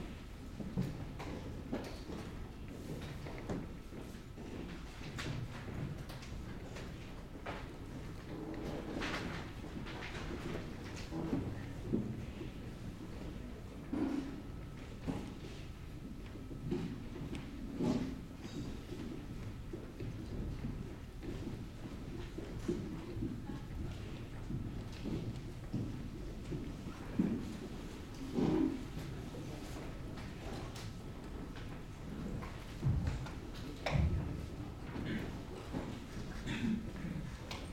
Place de la République, Strasbourg, Frankreich - bnu library
library, reading room, near central staircase, steps, announcement: "bibliotek is overcrowded".